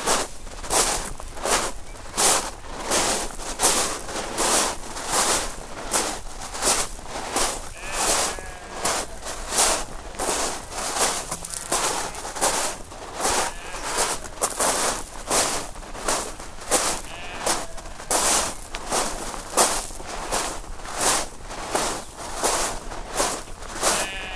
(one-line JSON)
{"title": "walk along West Bexington beach", "date": "2014-05-14 13:18:00", "latitude": "50.68", "longitude": "-2.67", "altitude": "5", "timezone": "Europe/London"}